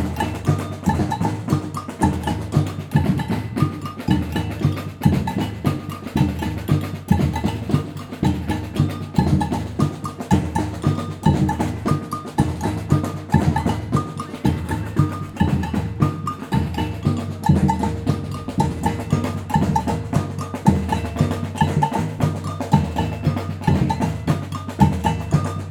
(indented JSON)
{
  "title": "Maximilian Park, Hamm, Germany - Samba drums in the park",
  "date": "2020-09-06 16:00:00",
  "description": "Samba grooves beim Eine-Welt-und-Umwelttag mit Sambanda Girassol.\nmehr Aufnahmen und ein Interview hier:",
  "latitude": "51.68",
  "longitude": "7.88",
  "altitude": "66",
  "timezone": "Europe/Berlin"
}